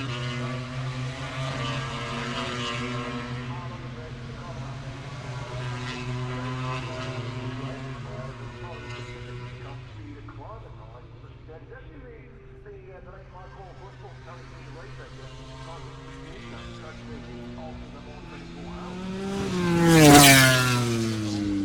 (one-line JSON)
{"title": "Silverstone Circuit, Towcester, UK - british motorcycle grand prix 2019 ... moto grand prix ... fp3 ...", "date": "2019-08-24 09:55:00", "description": "british motorcycle grand prix 2019 ... moto grand prix ... free practice three ... maggotts ... lavaliers clipped to bag ...", "latitude": "52.07", "longitude": "-1.01", "altitude": "156", "timezone": "Europe/London"}